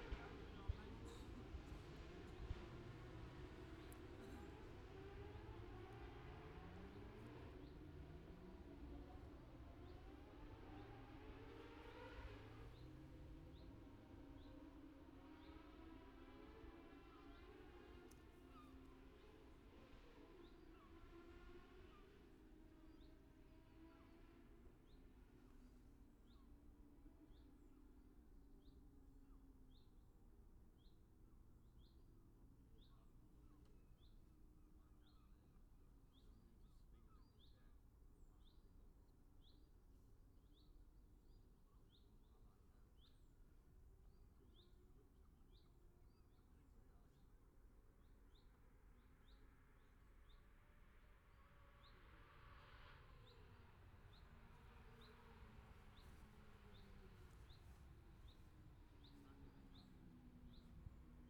Scarborough, UK - motorcycle road racing 2017 ... 600 ...
600cc practice ... even numbers ... Bob Smith Spring Cup ... Olivers Mount ... Scarborough ... open lavalier mics clipped to sandwich box ...
22 April 2017, ~09:00